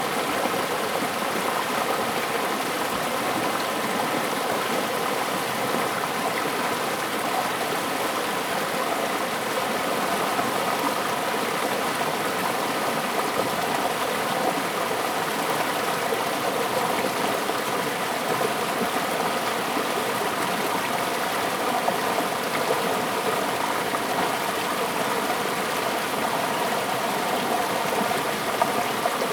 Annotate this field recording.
The sound of the river, Zoom H2n MS+XY +Spatial audio